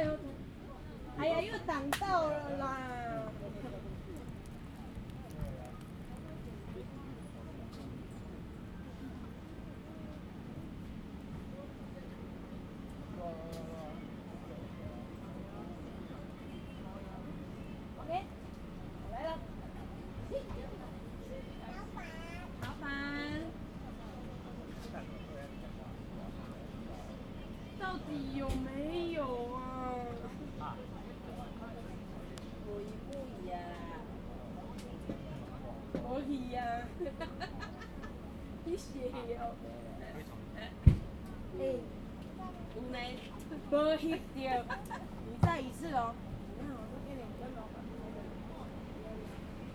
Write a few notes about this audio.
Many tourists under the trees, Very hot weather, Zoom H2n MS+ XY